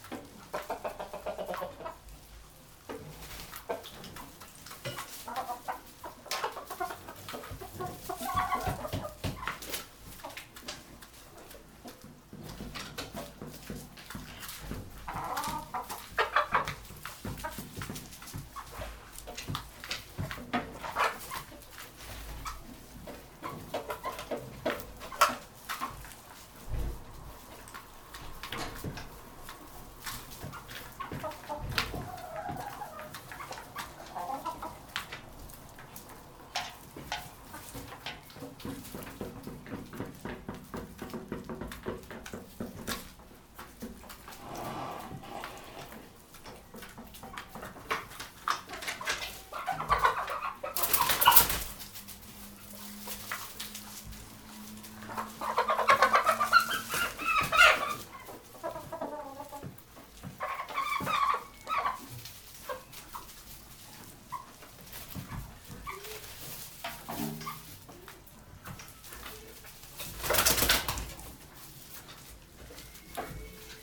Court-St.-Étienne, Belgique - Chicken life
Recording of the chicken secret life during one hour. I put a recorder in a small bricks room, where chicken are, and I went elsewhere.
Court-St.-Étienne, Belgium, 7 February 2017, ~11:00